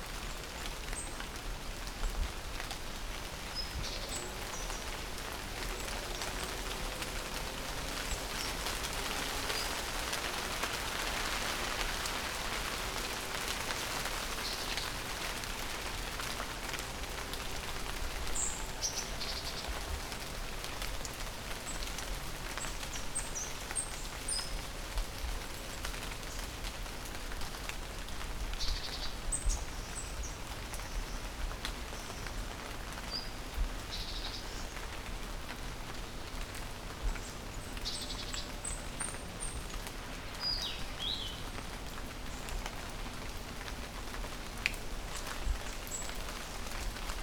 Sintra, trail towards Castelo dos Mouros - rain in the forest
heavy rain in the forest + birds. construction works sounds in the distance
Sintra, Portugal, September 2013